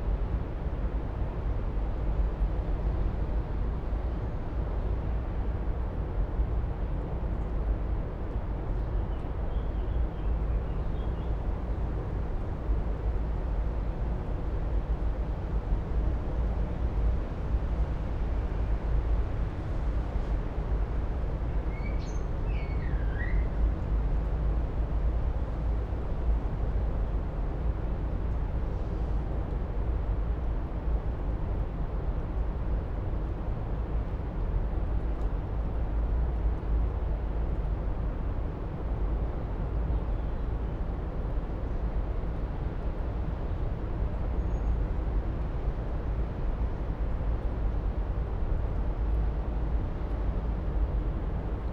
Rue Marie Curie, Esch-sur-Alzette, Luxemburg - river Alzette tube drone
River Alzette flows in a tube under most parts ot town, since the 1910s. At this point it comes to view, in a concrete canal. Water flow is mostly inaudible, but an immense drone streams out of that canal, maybe traffic and industrial noise from the other side of the city.
(Sony PCM D50, Primo EM272)